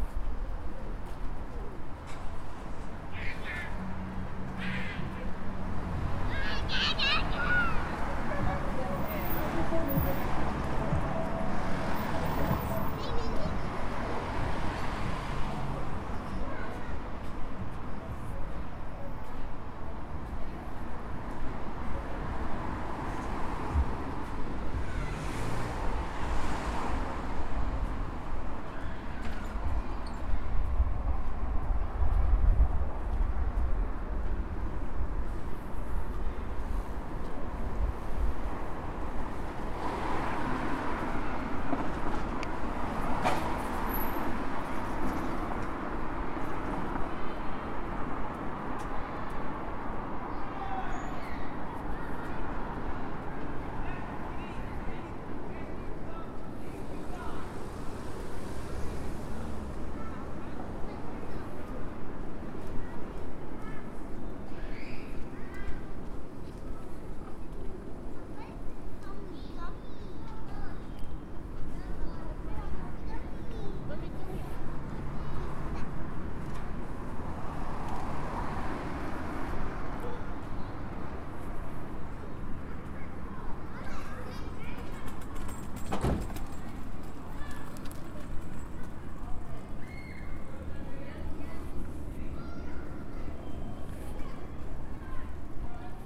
Capturing the sounds down Chatsworth Road through to Elderfield Road
England, United Kingdom, 1 January 2022, 13:28